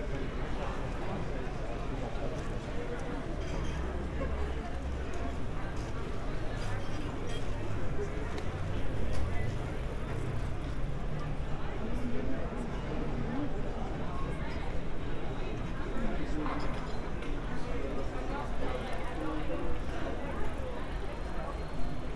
Berlin, Cafe Kotti - ambience on balcony, demonstration passing-by
ambience heard on the balcony of Zentrum Kreuzberg, sound of a demonstration, and a rare moment of only a few cars at this place.
(log of an radio aporee live session)
Berlin, Deutschland